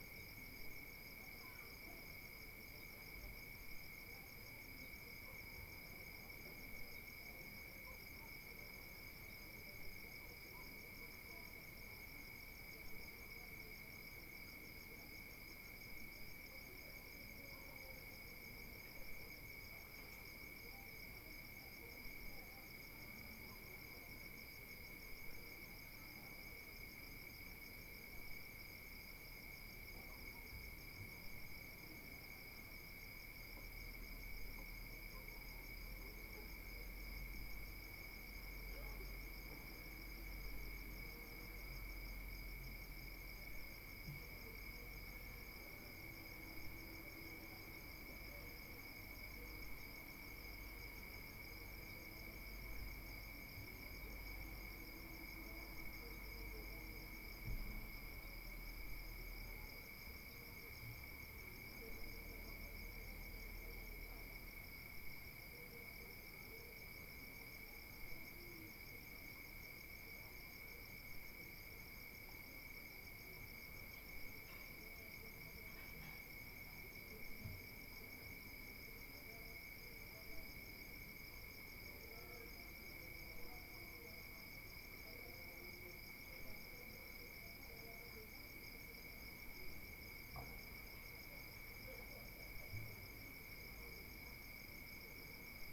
CILAOS Réunion - 20200211 1936-2010 CILAOS
20200211_19H36 À 20H10_CILAOS
CHANTS DES GRILLONS DÉBUT DE NUIT D'ÉTÉ